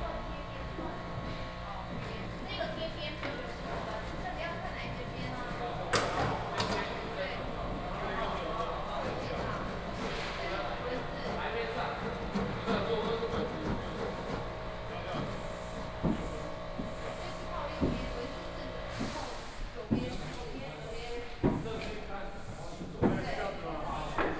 新北市政府, New Taipei City, Taiwan - Construction carpentry
Exhibition site construction